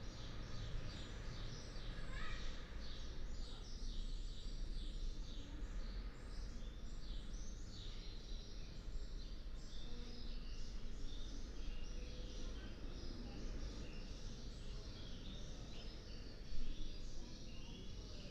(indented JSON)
{
  "title": "Berlin Bürknerstr., backyard window - Hinterhof / backyard ambience",
  "date": "2021-07-16 10:03:00",
  "description": "10:03 Berlin Bürknerstr., backyard window\n(remote microphone: AOM5024HDR | RasPi Zero /w IQAudio Zero | 4G modem",
  "latitude": "52.49",
  "longitude": "13.42",
  "altitude": "45",
  "timezone": "Europe/Berlin"
}